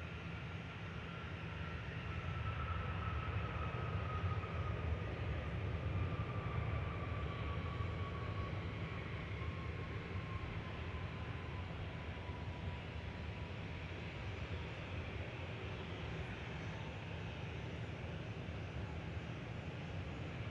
MSP Dog Park - MSP Dog Park 2022-7-10 18 1835
The sounds of the dog park next to Minneapolis/Paul International Airport. This is a great spot to watch planes when aircraft are landing on runway 12R. In this recording aircraft can be heard landing and taking off on Runway 12R and 12L and taking off on Runway 17. Some people and dogs can also be heard going by on the path.
Hennepin County, Minnesota, United States, 10 July 2022, ~19:00